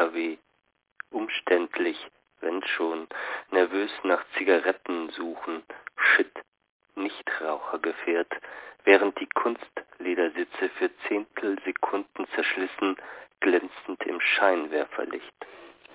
droschkend zu dir (2) - droschkend zu dir (2) - hsch ::: 27.03.2007 23:10:14
Berlin, Germany